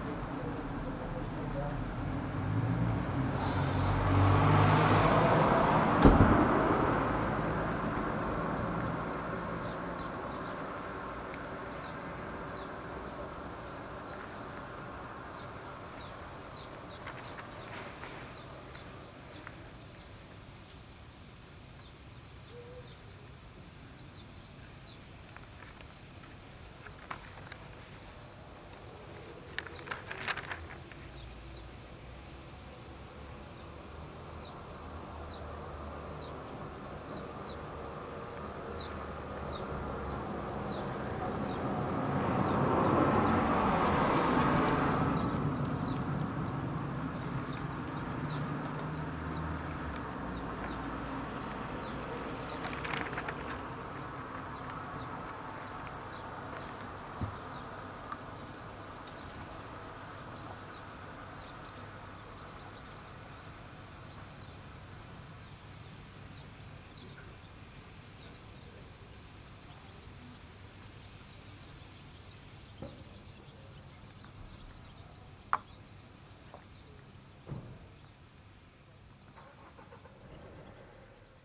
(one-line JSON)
{"title": "Epicerie du Platane, Niévroz, France - drinking a coffee on the terrace of the grocery store", "date": "2022-07-22 11:20:00", "description": "Cars mostly.\nboire un café à la terrasse de l'épicerie.\nDes voitures surtout.\nTech Note : Sony PCM-M10 internal microphones.", "latitude": "45.83", "longitude": "5.06", "altitude": "185", "timezone": "Europe/Paris"}